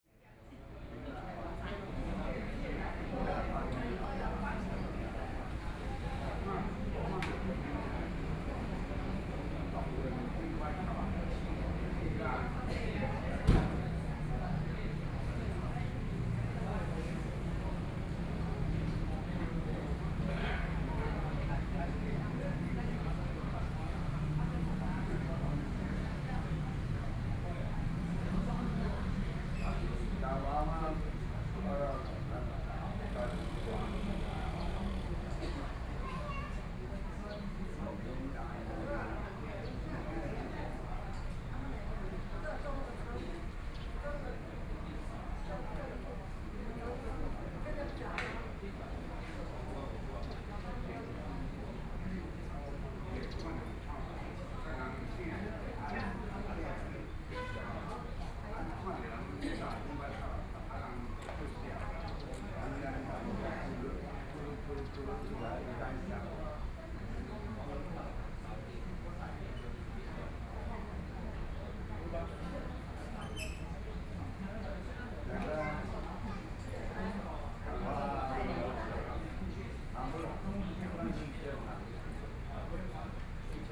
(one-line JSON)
{"title": "橋頭區橋南村, Kaohsiung City - Ciaotou Sugar Refinery", "date": "2014-05-16 14:13:00", "description": "Many tourists, Sitting next to the ice cream shop, Birds", "latitude": "22.75", "longitude": "120.32", "altitude": "19", "timezone": "Asia/Taipei"}